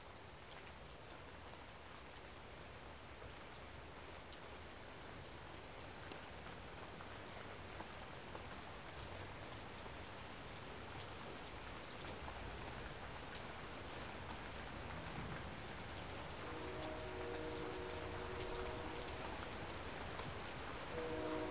Sherwood Forest - Idle Rain
A common Northwest rainshower is caught out an open window. Water falling through the trees mixes with more splattering on the deck, and sudden rushes as water in the gutters overcomes the pine needles and washes down the downspouts. Wind gusts occasionally pick up the intensity. Meanwhile, daily commerce continues unabated in the background.
Major elements:
* Rain falling on the trees, deck and ground
* Distant traffic
* Jet airplane
* Train (2 miles west)
* Edmonds-Kingston ferry horn (2 miles west)
* Furnace vent